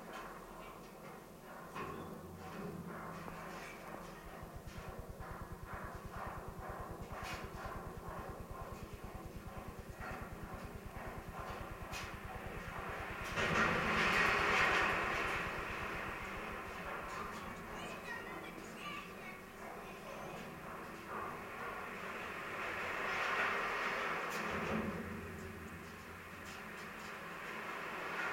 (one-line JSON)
{"title": "Maria Valeria Bridge, Sturovo-Esztergom, SK/HU", "latitude": "47.80", "longitude": "18.73", "altitude": "103", "timezone": "GMT+1"}